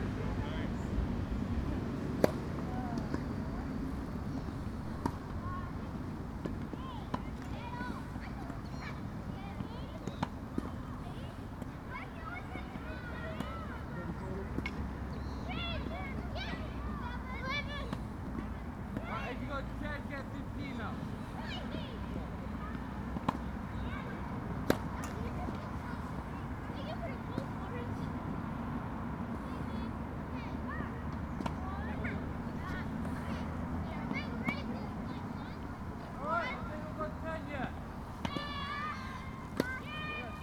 Gladstone Park, London, UK - Gladstone Park - Tennis Courts